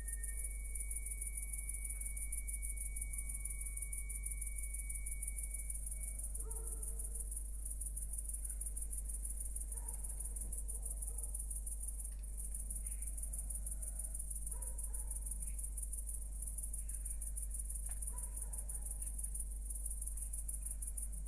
{"title": "Pod Lipą, Borsuki, Poland - (835a AB) midnight crickets", "date": "2021-08-20 23:55:00", "description": "Recording of midnight crickets, some tenants snoring (could be mine), and some unknown machine pitch.\nRecorded in AB stereo (17cm wide) with Sennheiser MKH8020 on Sound Devices MixPre6-II", "latitude": "52.28", "longitude": "23.10", "altitude": "129", "timezone": "Europe/Warsaw"}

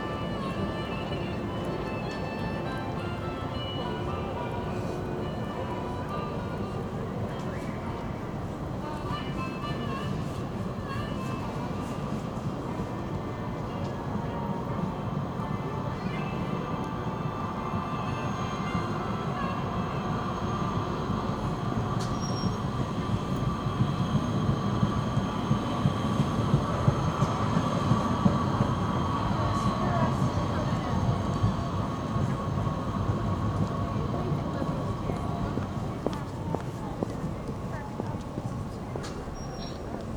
{"title": "nám. Svobody, Brno-střed, Česko - Freedom Square (Náměstí Svobody)", "date": "2015-10-26 10:00:00", "description": "Recorded on Zoom H4n + Rode NTG 1, 26.10.", "latitude": "49.20", "longitude": "16.61", "altitude": "226", "timezone": "Europe/Prague"}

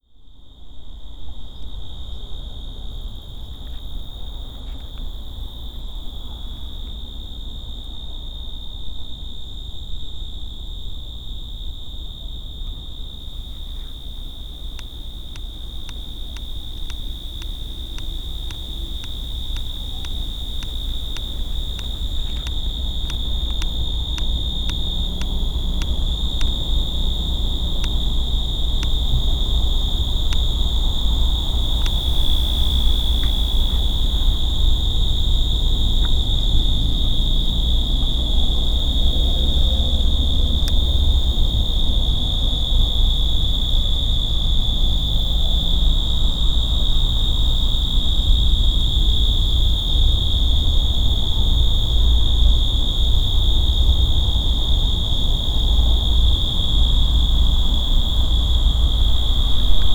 {"title": "Lomas del Madrono, Lomas del Campestre, Gto., Mexico - Cerro Gordo a las nueve y media de la noche.", "date": "2019-04-18 21:27:00", "description": "Noises on Cerro Gordo at half past nine at night. (Urban noise, crickets, air, distant dogs and some interference among other things.)\nI made this recording on April 18th, 2019, at 9:27 p.m.\nI used a Tascam DR-05X with its built-in microphones and a Tascam WS-11 windshield.\nOriginal Recording:\nType: Stereo\nRuidos en el Cerro Gordo a las nueve y media de la noche. (Ruidos urbanos, grillos, aire, perros lejanos y un poco de interferencias entre otras cosas.)\nEsta grabación la hice el 18 de abril 2019 a las 21:27 horas.", "latitude": "21.16", "longitude": "-101.71", "altitude": "1933", "timezone": "America/Mexico_City"}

